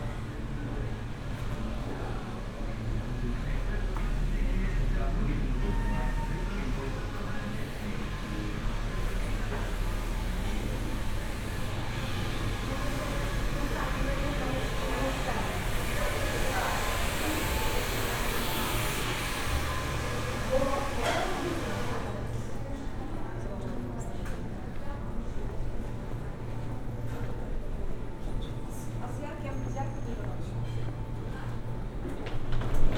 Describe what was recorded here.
(binaural recording) walking around grocery store and the shopping mall. passing by refrigerator, escalators, hairdressers, restaurants, laundry. roland r-07 + luhd PM-01 bins)